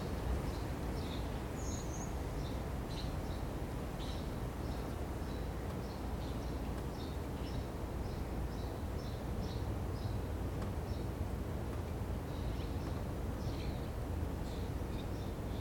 Recorded w/ Sound Devices MixPre-6 w/ Studio Projects C-4 Small Diaphragm Stereo Pair Microphones in a Philadelphia backyard at 4:30 pm on Thursday afternoon.